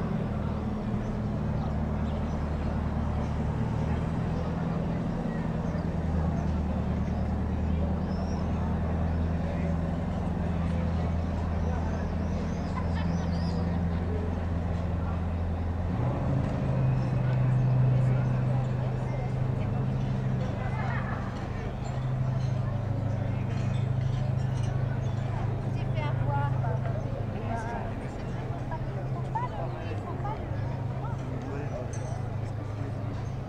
Rethymno, Crete, old harbour soundscape
Rethymno, Greece, 29 April 2019